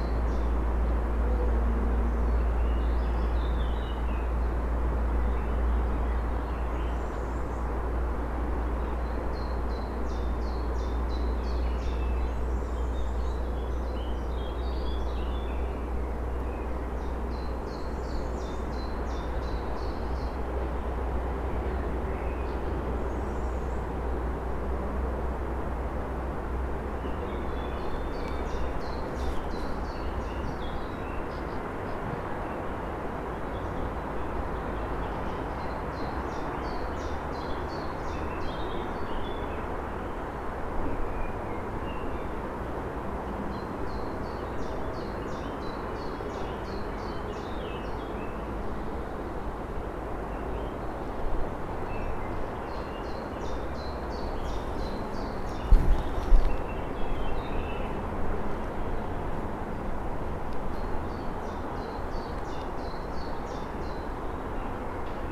Drzymały, Gorzów Wielkopolski, Polska - Viewpoint.
City noises captured from the viewpoint above so-called stairs to nowhere.